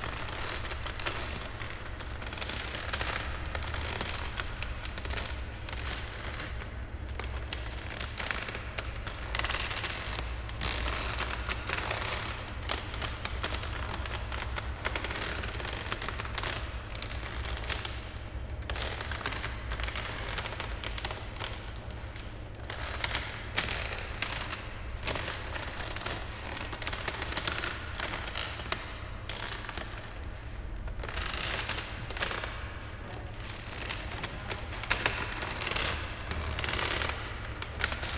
Muzeum hlavniho mesta Prahy
Cracking wooden floor at the Municipal Prague Museum. The museum was almost empty.
9 March 2008, 10:02